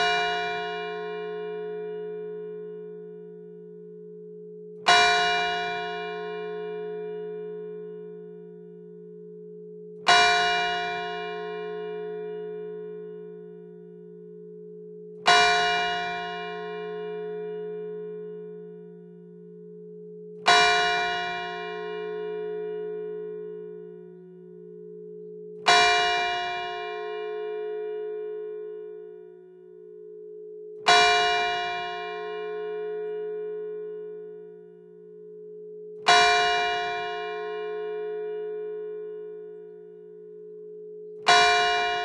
Rte de l'Église Saint-Martin, Montabard, France - Montabard - Église St-Martin
Montabard (Orne)
Église St-Martin
Le Glas
France métropolitaine, France, 22 September